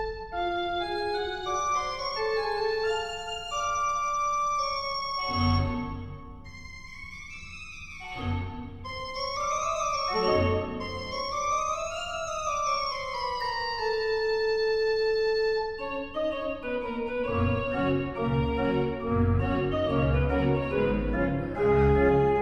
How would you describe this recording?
Bei einer Gesangsprobe. Der Organist Konrad Weiss improvisiert in einer Pause auf der kleinen Kirchenorgel. Wie so oft drücke ich zu spät auf den Rec Knopf. Juni 1998